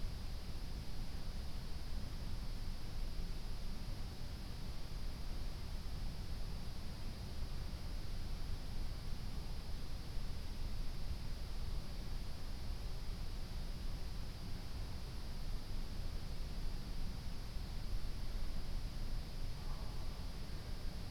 Ascolto il tuo cuore, città. I listen to your heart, city. Several chapters **SCROLL DOWN FOR ALL RECORDINGS** - Stille Nacht Dicembre 2020 in the time of COVID19: soundscape.
"Stille_Nacht_Dicembre_2020 in the time of COVID19": soundscape.
Chapter CXLVI of Ascolto il tuo cuore, città. I listen to your heart, city
Monday, December 14th 2020. Fixed position on an internal terrace at San Salvario district Turin, more then five weeks of new restrictive disposition due to the epidemic of COVID19.
Four recording of about 6’ separated by 7” silence; recorded between at 11:07 p.m. at 23:46 p.m. duration of recording 24’20”